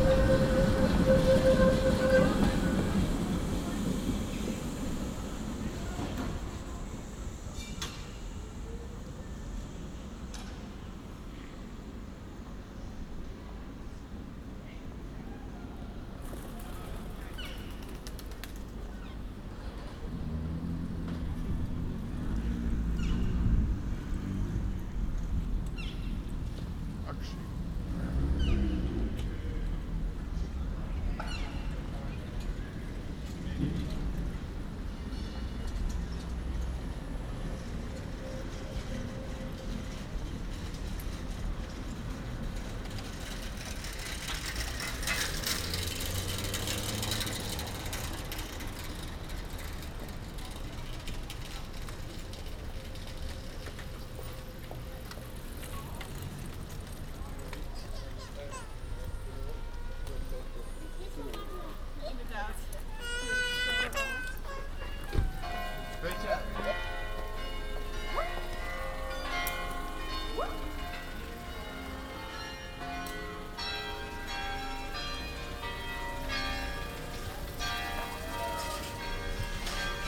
Halstraat, Den Haag. - City sounds
A very dense recording with many different sounds. Recorded walking from the Grote Halstraat to the Torenstraat.